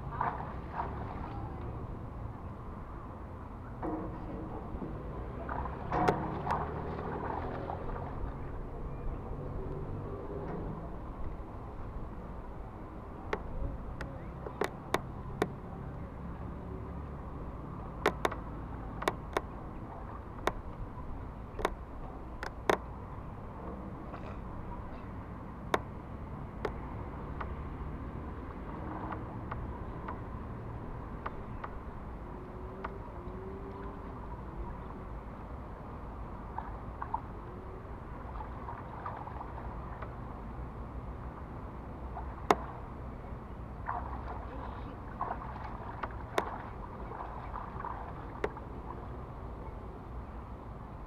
Utena, Lithuania, in pontoon bridge
little microphones placed under pontoon bridge